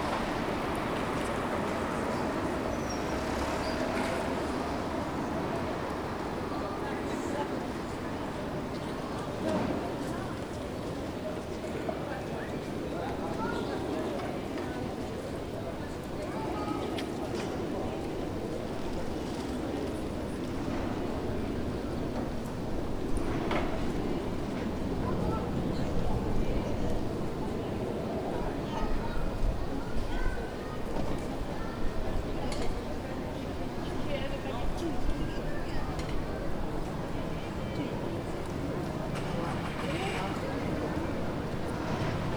Rue de la République, Saint-Denis, France - Marché St Denis (no market)
This recording is one of a series of recording, mapping the changing soundscape around St Denis (Recorded with the on-board microphones of a Tascam DR-40).
25 May